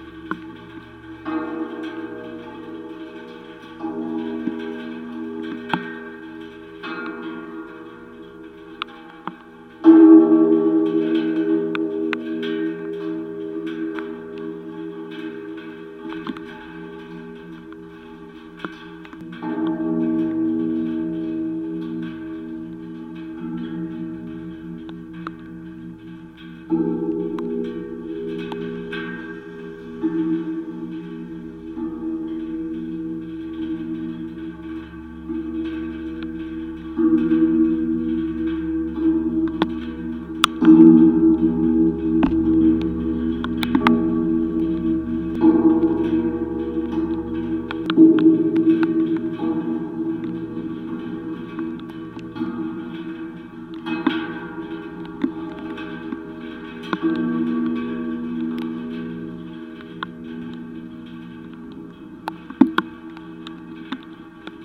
Maintenon, France - Barrier
Playing with a new metallic barrier surrounding the college school. I noticed these huge steel bars would be perfect to constitude a gigantic semantron. So I tried different parts. Recorded with a contact microphone sticked to the bars.